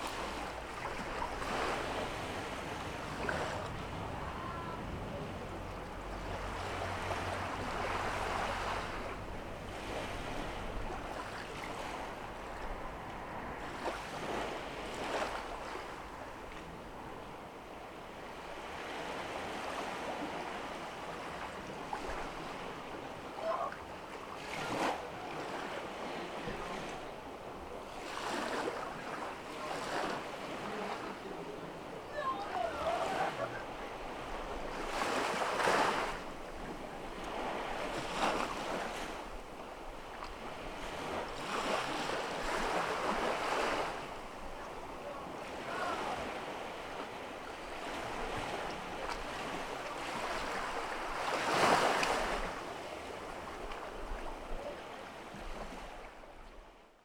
Presquîle du Croisic
Loire Atlantique
Plage des Sables Menus
Minuit
Marée montante
Feu de camp dans une cric (à gauche)
Croisic, France, 13 August